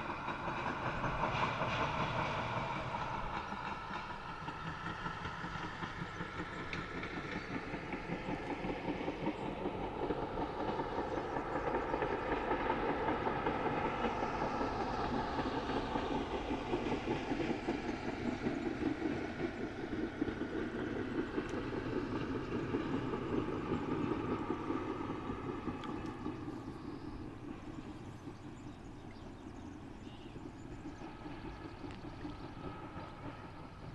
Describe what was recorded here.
Primeiro comboio. Manha. Nagozelo do Douro. Mapa Sonoro do Rio Douro. First morning train. Nagozelo do Douro. Douro River Sound Map